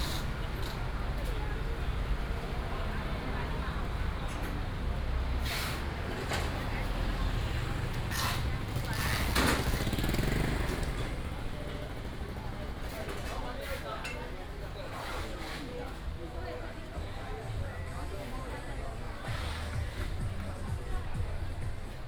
龍興市場, Banqiao Dist., New Taipei City - Old street market
Walking through the Traditional Taiwanese Markets, Traffic sound, vendors peddling, Binaural recordings, Sony PCM D100+ Soundman OKM II
New Taipei City, Taiwan, 25 August 2017